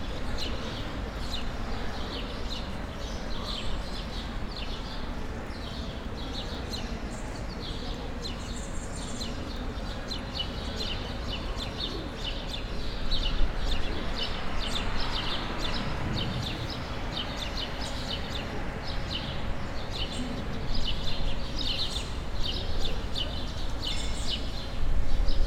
Del Buen Pastor Plaza, Donostia, Gipuzkoa, Espagne - Buen Pastor
Buen Pastor square
Captation ZOOM H6
Euskadi, España, 26 May 2022